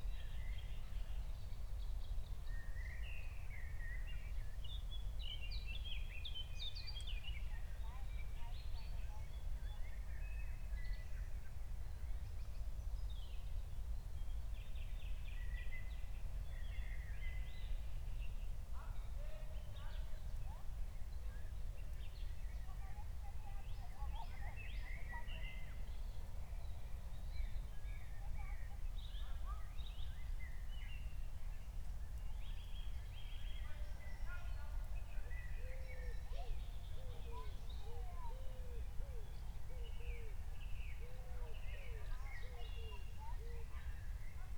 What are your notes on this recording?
21:00 Berlin, Buch, Mittelbruch / Torfstich 1